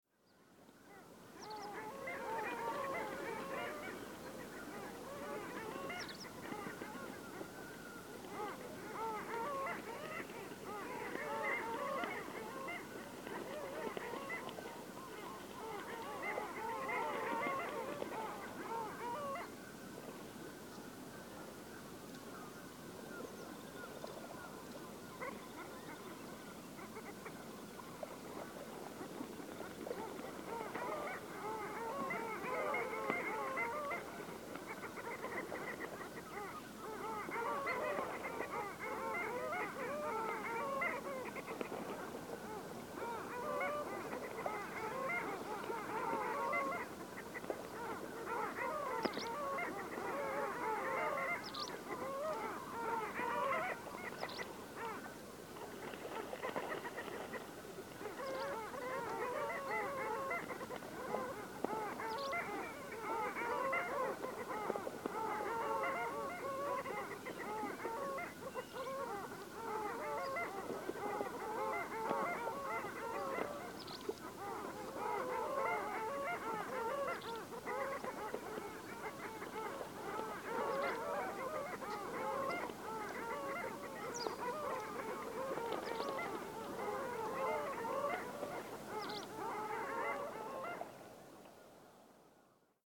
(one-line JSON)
{"title": "Seabirds taking over the bay from ice: Kaberneeme, Estonia", "date": "2011-04-18 14:53:00", "description": "Winter gone from everywhere else, islets of ice still dominate the bay at this southern coast of the Bay of Finland. Birds are loudly taking over, however, on this sunny windless late April evening.", "latitude": "59.51", "longitude": "25.29", "altitude": "3", "timezone": "Europe/Tallinn"}